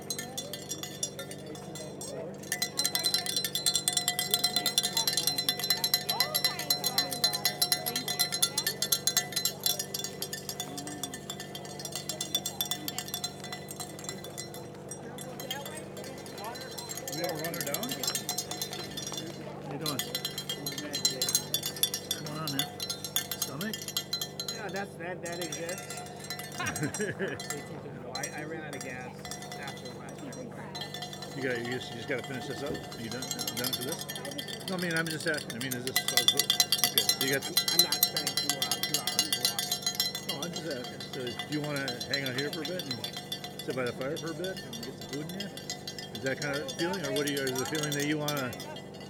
Zumbro River Bottoms - Zumbro Ultra Marathon
Sounds of Aid Station at the Zumbro Ultra Marathon. The Zumbro Ultra Marathon is a 100 mile, 50 mile, 34 mile, and 17 mile trail race held every year at the Zumbro River Bottoms Management area.
Recorded with a Zoom H5
Minnesota, United States, 9 April 2022